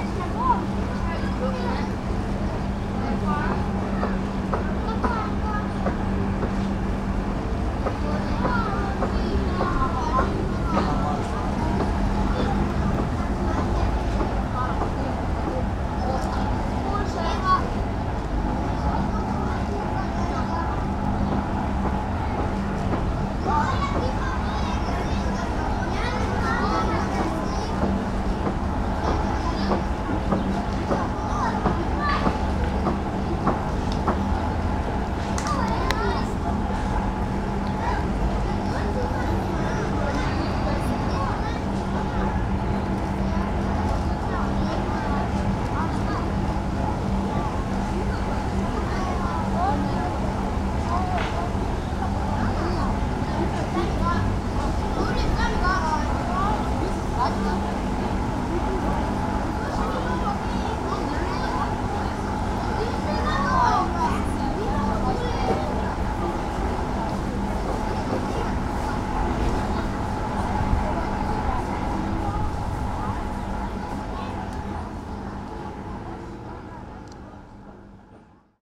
Ptuj, Slovenia - overlooking ptuj
overlooking the town of ptuj from the hilltop castle. ptuj seems to be under construction in every direction - you can hear hammers and bulldozers from all over town.